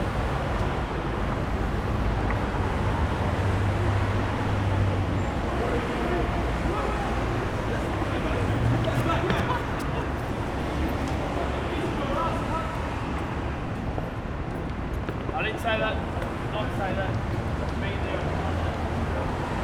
neoscenes: stairs at Australia Square
Sydney NSW, Australia, 12 November 2009